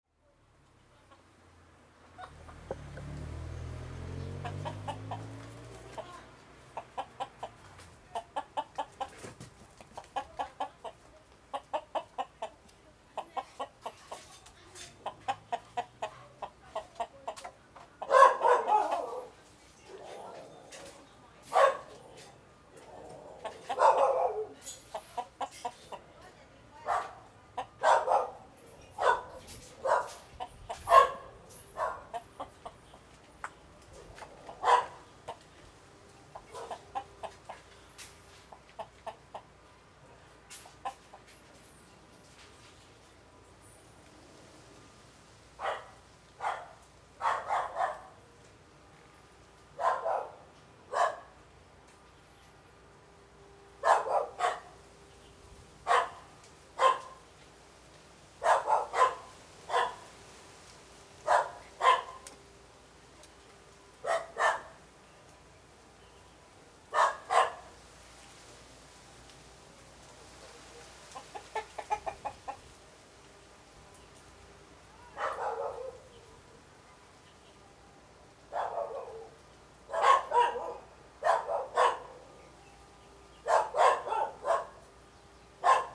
寮北巷, Houliao - in the Little Village
Chicken sounds, Dogs barking, Birdsong, Distant factory noise, Zoom H6